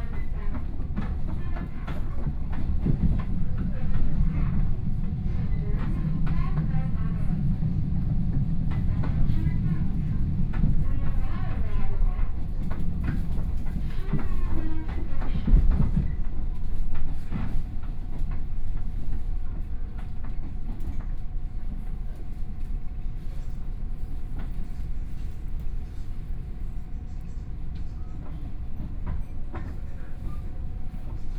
Wuri District, Taichung - Local Train
from Wuri Station to Chenggong Station, Binaural recordings, Zoom H4n+ Soundman OKM II